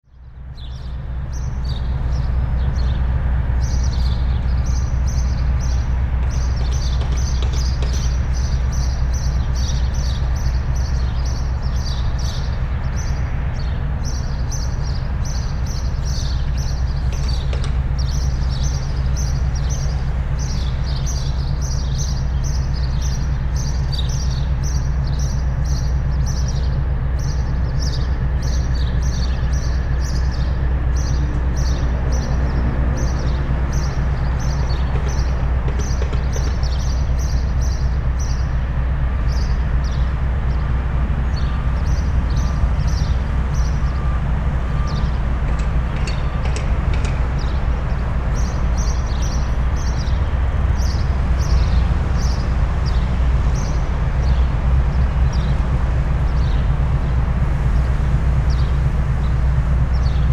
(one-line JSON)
{"title": "Gral. César Díaz, Montevideo, Departamento de Montevideo, Uruguay - powerplant drone", "date": "2021-11-06 11:49:00", "description": "Montevideo diesel powerplant - Central Termoelectrica \"José Batlle y Ordóñez\" - with 4 generators with a total output of 343 MW. In this recording we hear 1! generator.", "latitude": "-34.89", "longitude": "-56.20", "altitude": "6", "timezone": "America/Montevideo"}